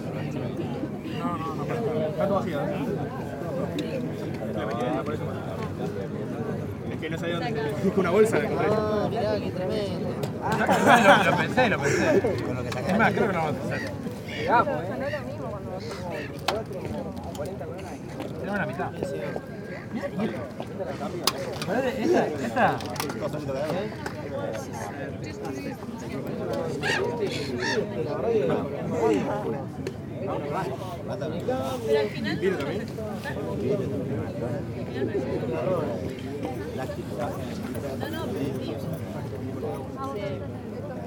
København, Denmark - Tourists bum around
Near a big lake, a group of Spanish tourists is drinking and screws around the water.
17 April 2019, 19:00